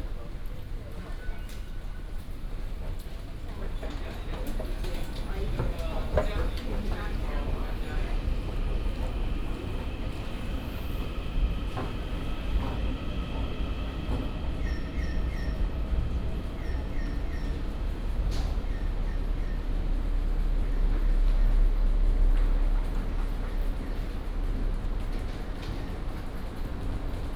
Taipei, Taiwan - Walking in the station platform
Walking in the station platform
中正區 (Zhongzheng), 台北市 (Taipei City), 中華民國